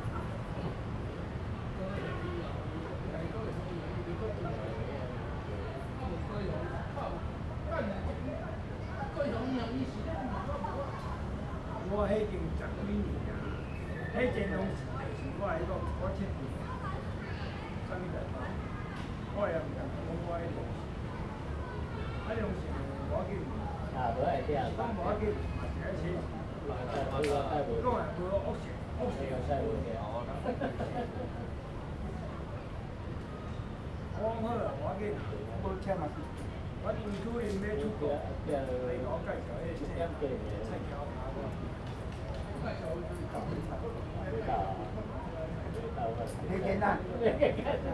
臺南公園, 台南市東區 - in the Park
in the Park
Zoom H2n MS+XY